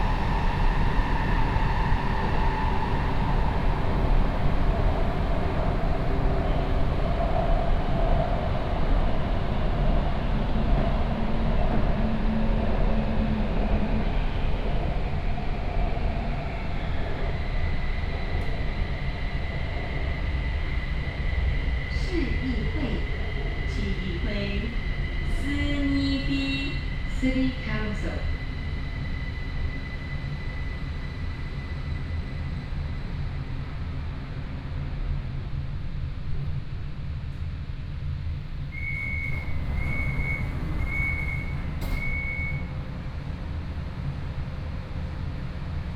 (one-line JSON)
{"title": "高雄市前金區, Taiwan - Orange Line (KMRT)", "date": "2014-05-14 07:48:00", "description": "Kaohsiung Mass Rapid Transit, from Yanchengpu station to Formosa Boulevard station", "latitude": "22.63", "longitude": "120.30", "altitude": "12", "timezone": "Asia/Taipei"}